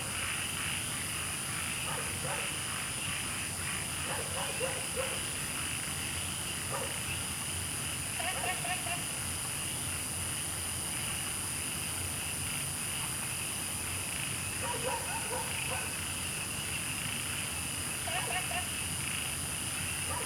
MaoPuKeng Wetland Park, Puli Township - Frogs chirping
Frogs chirping, Insects sounds, Wetland, Dogs barking
Zoom H2n MS+ XY
Puli Township, 桃米巷11-3號, 10 August 2015